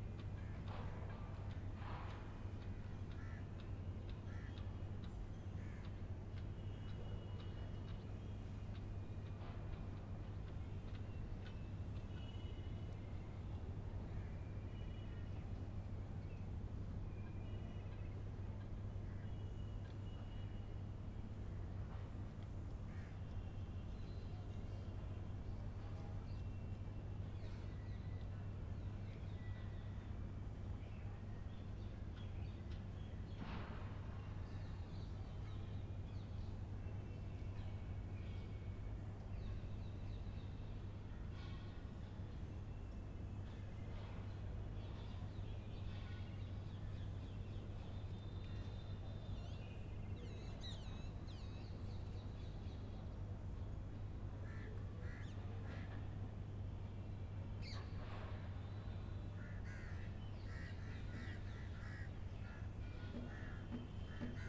General city ambiance recorded from the flat roof of the very interesting old mosque in Delhi.
New Delhi, Delhi, India